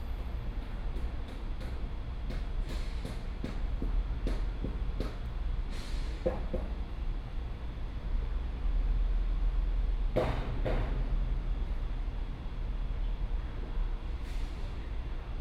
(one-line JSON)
{"title": "Taichung Station - Next to the station", "date": "2013-10-08 11:22:00", "description": "Station broadcast messages, Railway Construction, Birdsong, Zoom H4n + Soundman OKM II", "latitude": "24.14", "longitude": "120.69", "altitude": "77", "timezone": "Asia/Taipei"}